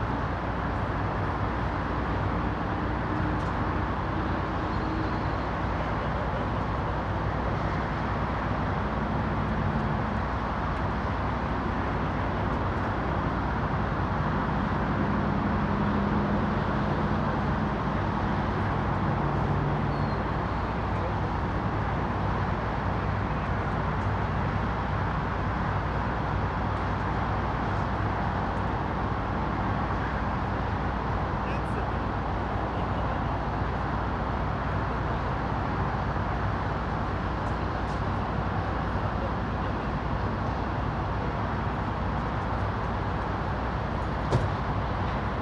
Jasper Hwy, Hardeeville, SC, USA - South Carolina Welcome Center Parking Lot
A recording taken in the parking lot of the South Carolina welcome center/rest stop. The recorder was positioned so that the highway was to the left of the recorder. Some minor processing was done in post.
[Tascam Dr-100mkiii, on-board uni mics]